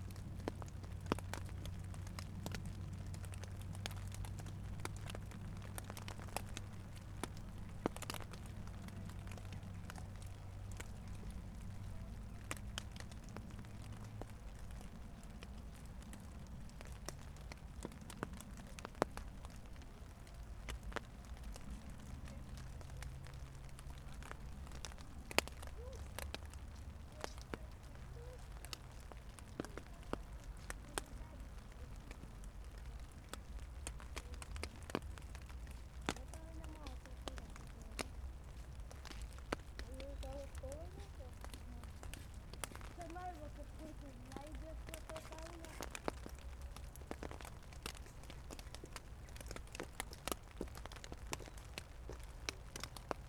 Lithuania, Utena, rain on garbage bags
close-up recording of raindrops on plastic garbage bags
25 November, ~19:00